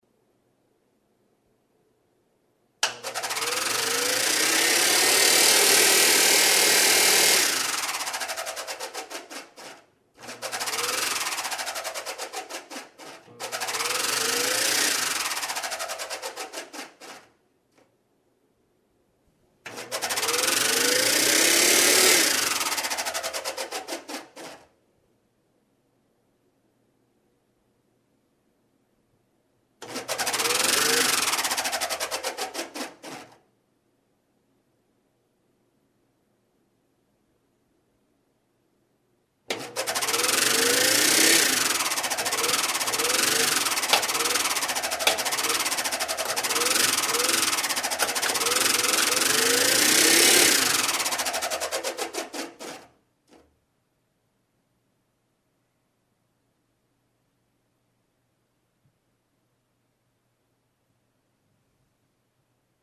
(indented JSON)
{
  "title": "Montreal: Apt., Terrasse St. Denis - Apt. 407 334, Terrasse St. Denis",
  "date": "2008-06-18 15:00:00",
  "description": "equipment used: Nagra Ares MII\nKitchen extractor fan",
  "latitude": "45.52",
  "longitude": "-73.57",
  "altitude": "35",
  "timezone": "America/Montreal"
}